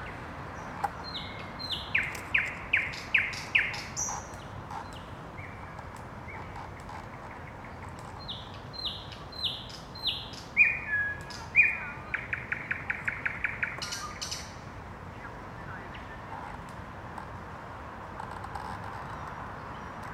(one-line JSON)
{"title": "night bird sounds in park, Helsinki", "date": "2011-06-12 00:40:00", "description": "recorded during the emporal soundings workshop, Helsinki", "latitude": "60.18", "longitude": "24.91", "timezone": "Europe/Helsinki"}